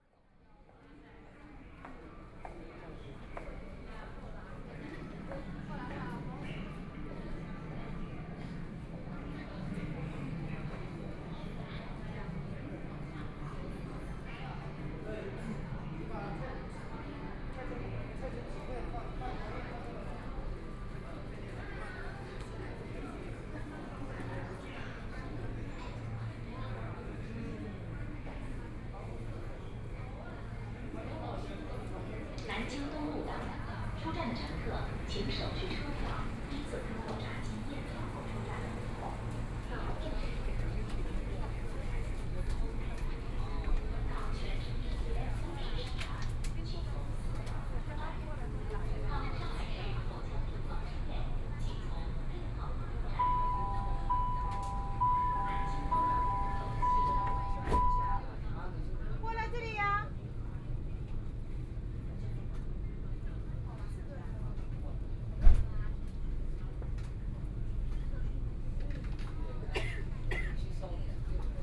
Huangpu District, Shanghai - L10( Shanghai Metro)
from East Nanjing Road Station to Yuyuan Garden Station, Binaural recording, Zoom H6+ Soundman OKM II